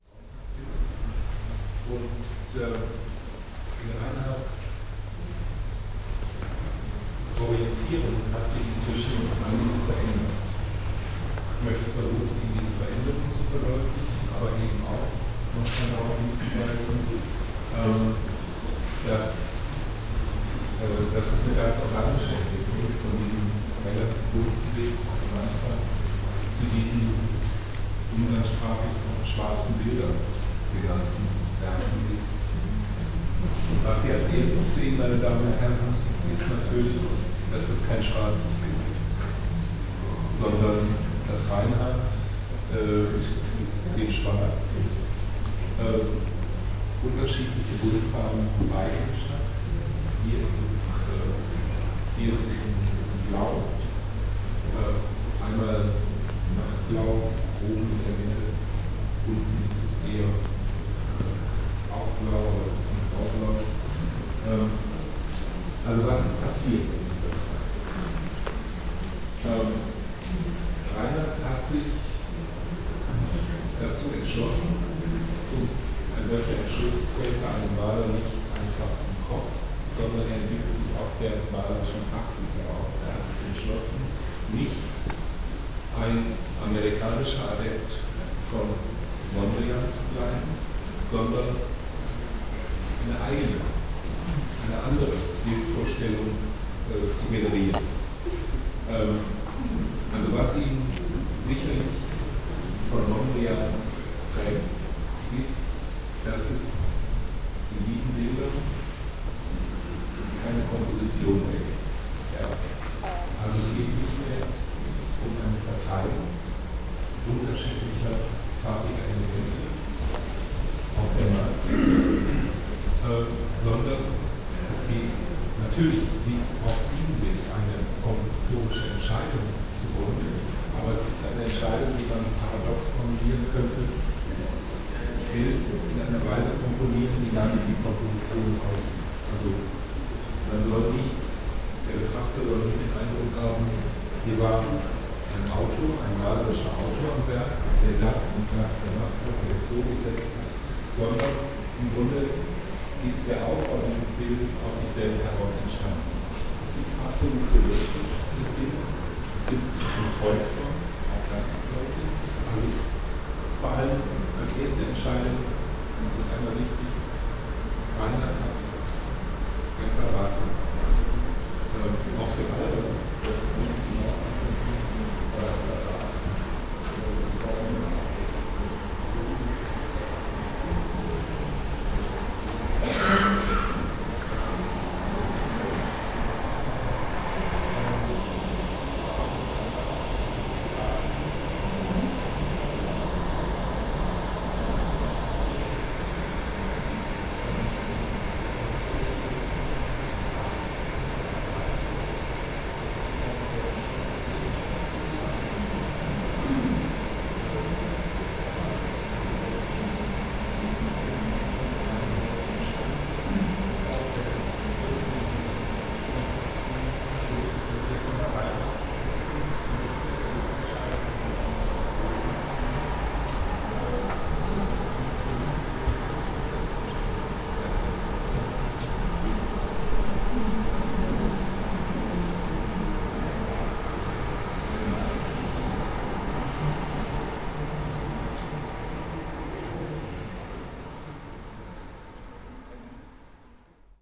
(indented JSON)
{
  "title": "bottrop, quadrat, josef albers museum",
  "date": "2011-02-10 16:03:00",
  "description": "inside the museum dureing the AD Reinhardt exhibition while a guide introduces the art works\nsoundmap d - social ambiences, art spaces and topographic field recordings",
  "latitude": "51.53",
  "longitude": "6.92",
  "altitude": "48",
  "timezone": "Europe/Berlin"
}